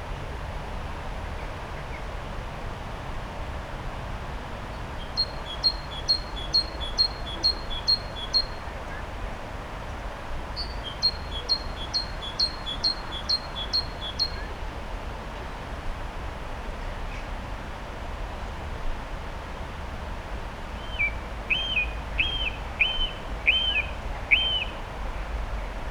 The Alnwick Garden, Denwick Lane, Alnwick, UK - a recording ... of a recording ...
a recording ... of a recording ... recording of a loop of bird song ... bird song from ... wren ... great tit ... song thrush ... coal tit ... background noise of voices ... fountains ... traffic ... and actual bird calls ... lavalier mics clipped to baseball cap ...